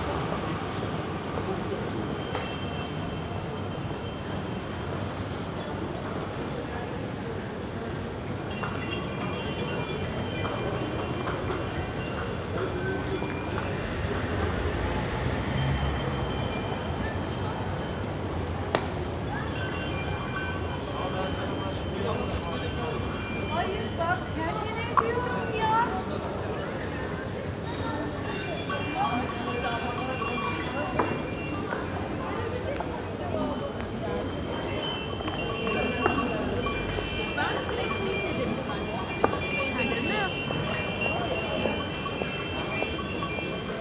man selling bells, Istanbul
street salesman selling bells on a pedestrian street